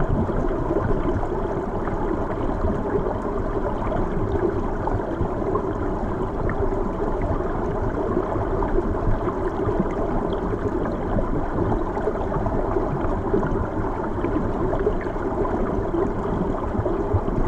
Utena 28146, Lithuania, under the bridge
When winter is real winter! Small rivers and streamlets are entwined in ice. There is some little opening just under the passenger's bridge. Dougle recording: first part - omni mics, the second part - omni with geophone on ice.